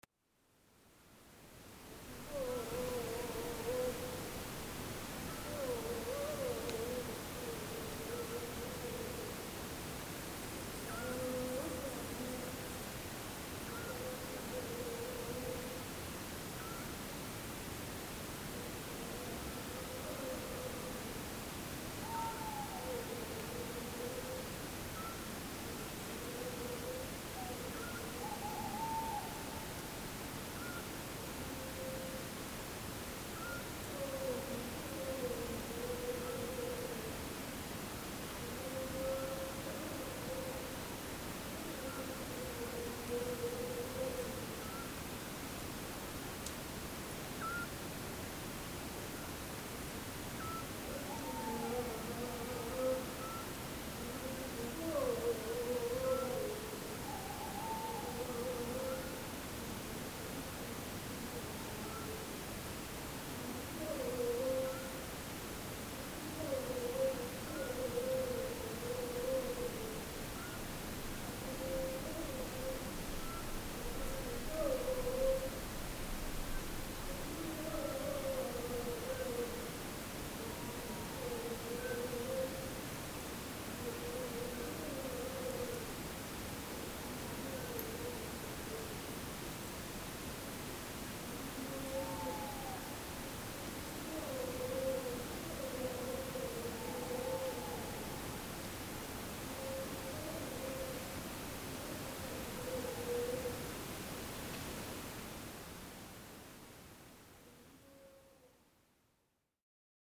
Late evening in Vikos gorge, Greece. Owls, song of a shepherd and hiss of mics.
Vikos gorge in Greece. I think there were no other people in the gorge that night except our hiking fellowship and a shepherd somewhere higher on the slopes with his sheep.
Tymfi, Greece, 26 May 2011, 21:15